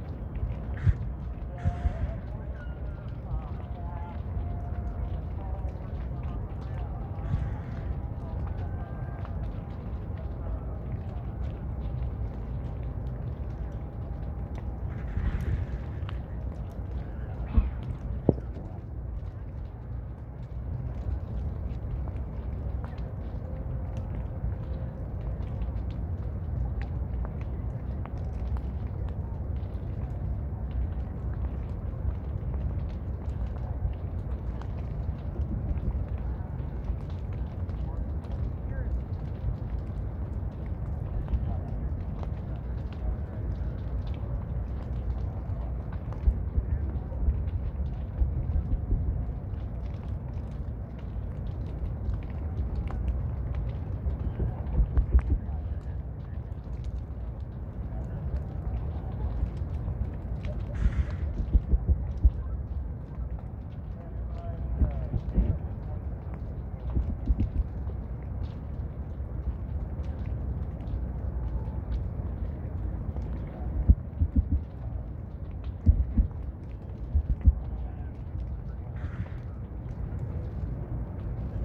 Black Rock City, Nevada, USA - Temple of Direction Burn
Perspective inside the safety perimeter at the extremely hot burning of the Temple of Direction at the culmination of the Burning Man event 2019. Recorded in ambisonic B Format on a Twirling 720 Lite mic and Samsung S9 android smartphone, downmixed into binaural